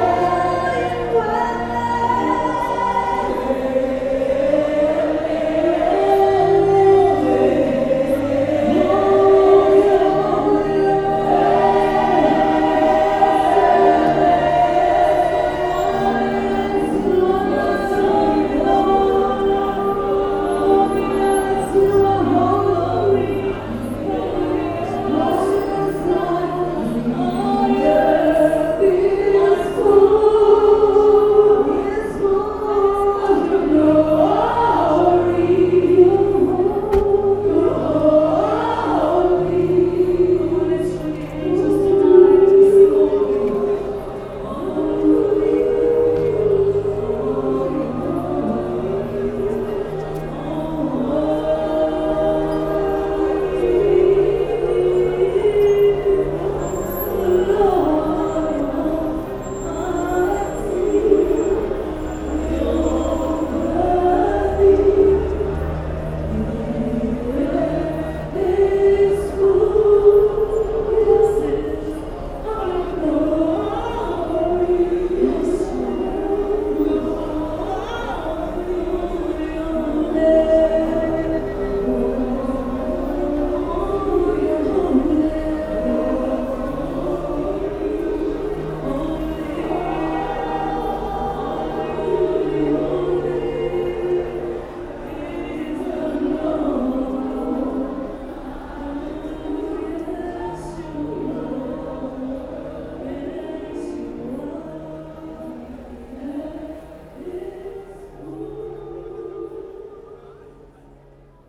Park Lane, Harare, Zimbabwe - City Presbyterian Church Harare
Its almost 7 and I’m rushing for my combi taxi on the other side of Harare Gardens… as I turn the corner into Park Lane, past the National Gallery, gospel sounds are filling the street. All windows and doors are open on the building opposite the hotel… I linger and listen… and I’m not the only one…